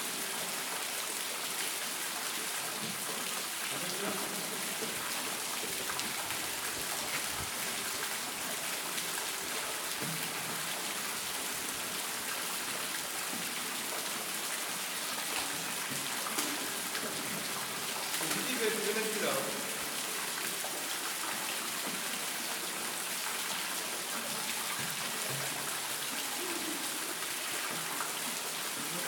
Mériel, France - Around the Source in a undergroud Quarry
Il y a une source dans les carrières abandonnées d'Hennocque, nous essayons de trouver notre chemin à travers les couloirs inondés.
Some of the tunnels of the abandonned Quarry Hennocque are flooded.
We are trying to find our way around the water source.
/zoom h4n intern xy mic